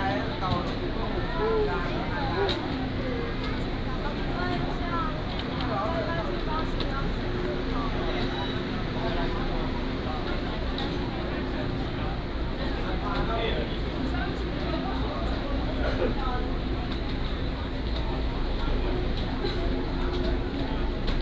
Tainan Station, Taiwan - To the station exit
From the station platform, Through the underground road, To export.
Tainan City, Taiwan, 31 January 2017